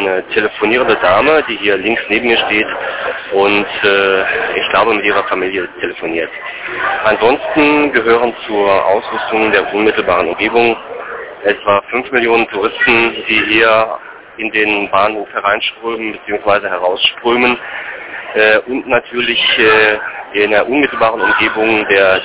Fernsprecher Bahnhofsvorplatz, Köln HBF - radio aporee ::: etwa fünf millionen touristen ::: 14.08.2007 15:31:32